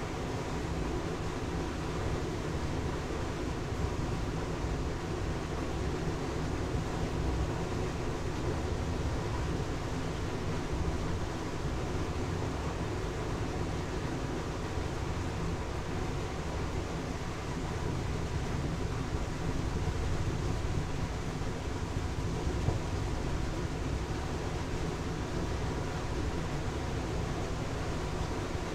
water stream going to the large pipe
21 March 2020, 17:00